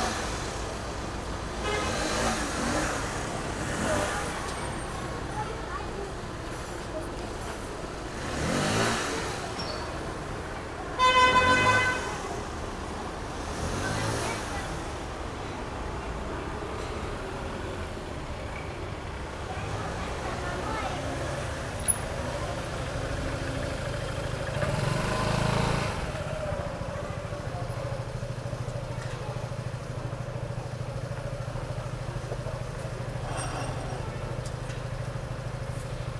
Rue de La Jonquiere, Paris - Le Voltigeur Cafe
Sitting outside the cafe, DR40 resting on an ash tray, with the on-board mics capturing the bustle of people passing.
Paris, France, 22 May 2019